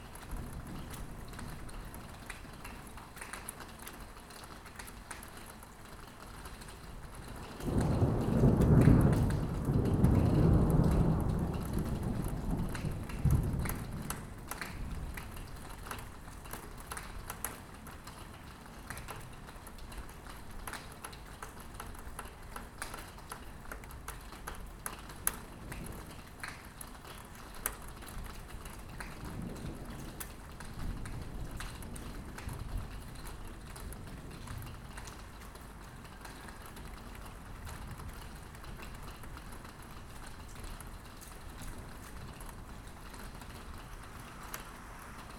Vila de Gràcia, Barcelona, Barcelona, España - RAIN03112014BCN 03
Raw recording of rain.
Barcelona, Barcelona, Spain, 3 November 2014